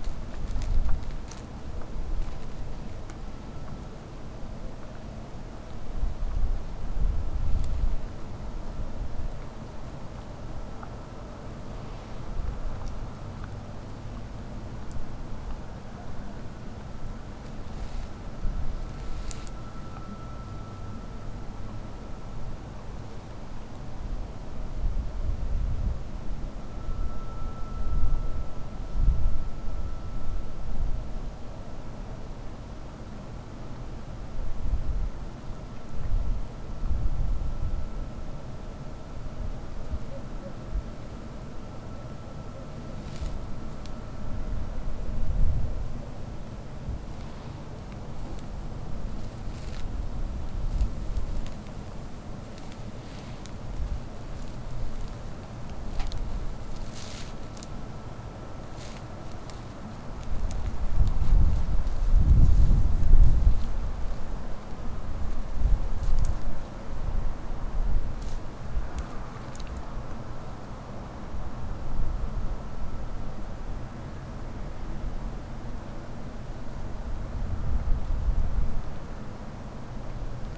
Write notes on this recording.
a few wild boars churning in the ground, treading dry bushes and slurping in the field in the middle of the night. also an unidentified, modulated, sine sound/whine.